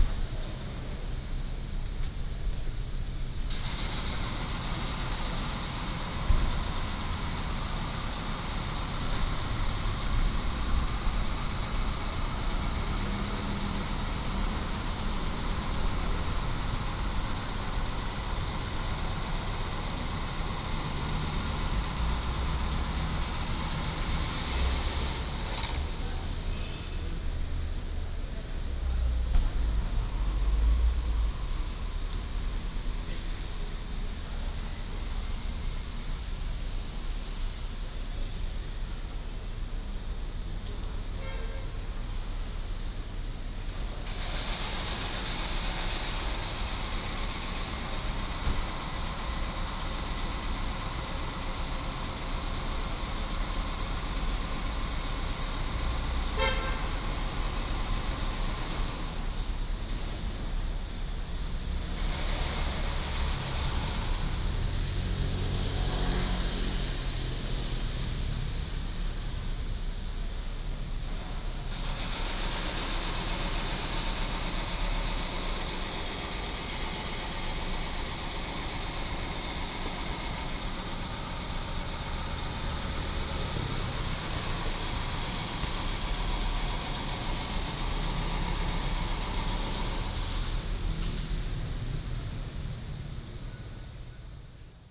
Sec., Jianguo S. Rd., Da'an Dist. - Small park
Small park, Traffic Sound, Far from the construction site